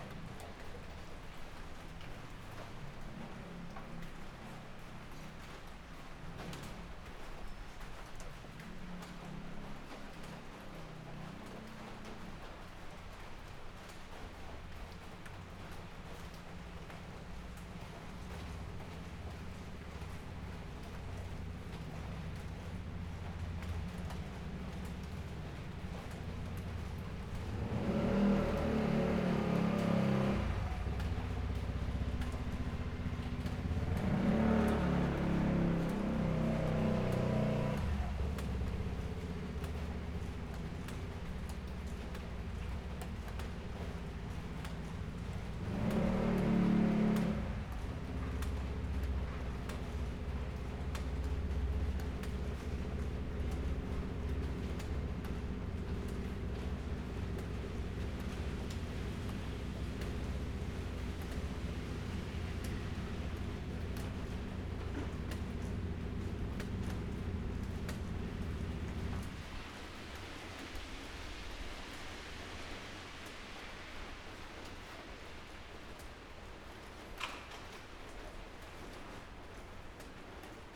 Beitou - rainy day
rainy day, Zoom H6 MS +Rode NT4, Binaural recordings
Beitou District, Taipei City, Taiwan, March 2014